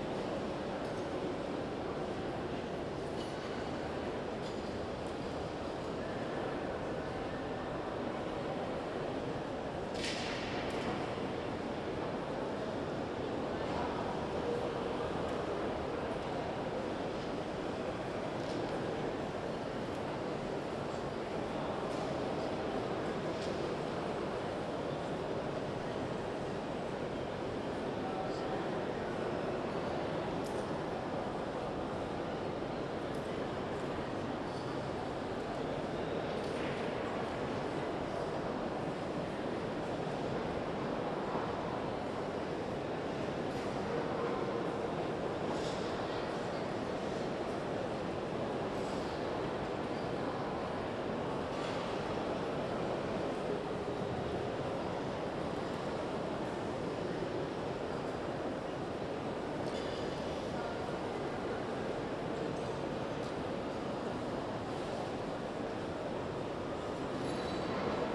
Zürich, UNI, Schweiz - Lichthof, Mensa
Ich stehe im Treppenhaus, oberhalb des Lichthofes.
Switzerland, 8 June 2002, 14:30